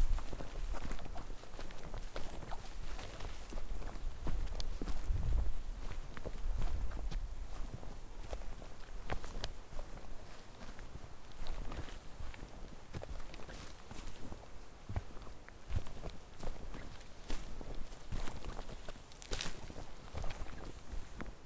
Trehörningsjö, vandring på stig - Walking trail
Walking alongside the Husån rapids through the woods on the trail back to Kerstins Udde for coffe discussions about the sound experiences on the soundwalk on World Listening Day, 18th july 2010.